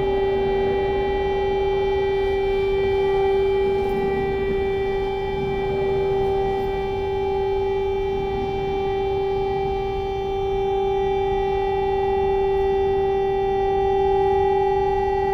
Regularly 1st wednesday every Month you can hear the sirens air raid test at noon thi stime recorded on the top floor of the Trade Fair Palace National Gallery in Prague
Praha-Praha, Czech Republic, November 2014